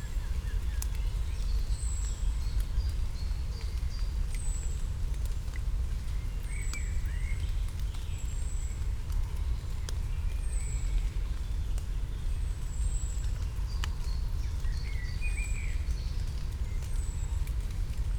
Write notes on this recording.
ambience at the edge of Śląski Park Kultury, Silesian Park, between Chorzów, Katowice and Siemianowice, distant traffic drone, light rain, very light flow of a little water stream, (Sony PCM D50, DPA4060)